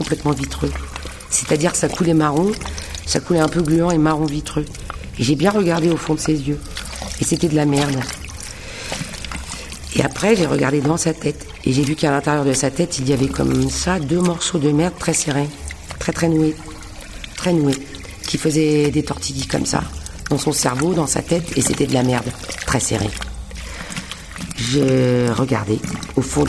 La Friche - Spatioport - L'homme de merde - Florence Kutten
Texte de Christophe Tarkos